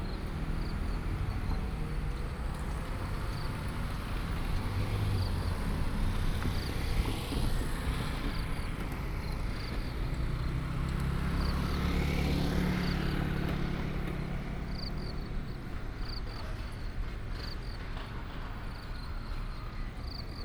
Zhandong Rd., Luodong Township - the railway level crossing
Traffic Sound, In the railway level crossing, Trains traveling through, Insects sound
Luodong Township, Yilan County, Taiwan, 27 July